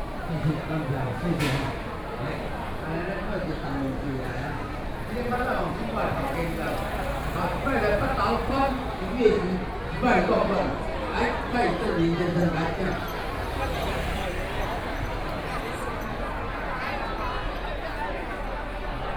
Beitou, Taipei - wedding
The wedding scene in the street, Sony PCM D50 + Soundman OKM II